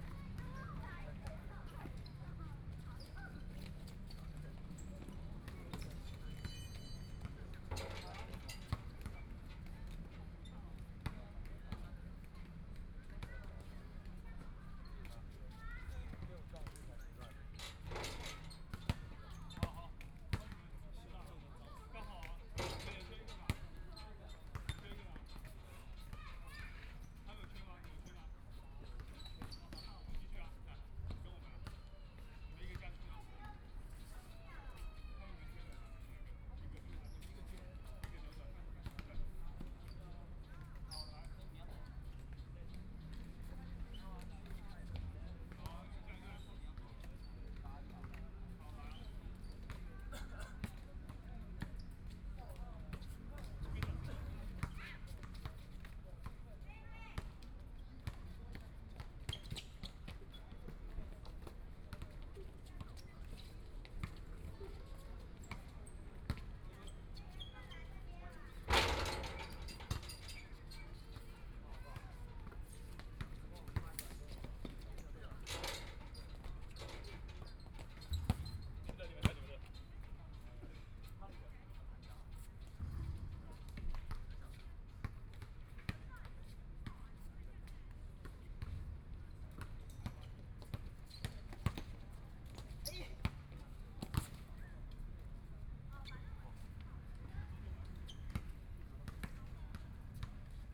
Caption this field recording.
sitting in the Park, Children's game sound, Playing basketball voice, Traffic Sound, Binaural recordings, Zoom H4n+ Soundman OKM II